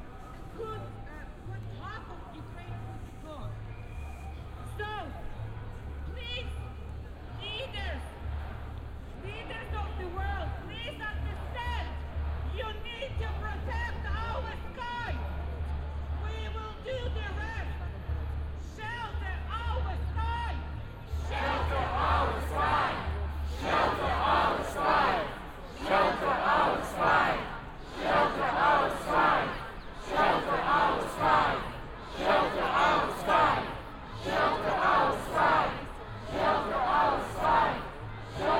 Horse Guards Parade and Whitehall - Stand with Ukraine! London Anti-War Rally, Whitehall. 26 February 2022
About twenty minutes at the 'Stand with Ukraine!' Anti-War Rally in London. Binaural recording made with Tascam DR-05, Roland CS-10EM binaural microphones/earphones.